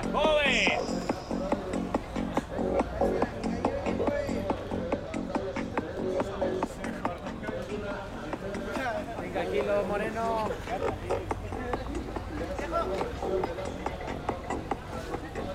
de Mayo, Centro histórico de Puebla, Puebla, Pue., Mexique - Calle 5 de Mayo - Puebla
Puebla (Mexique)
Rue 5 de Mayo
d'innombrables marchands ambulants. - extrait
Puebla, México, 18 September 2019, 11:00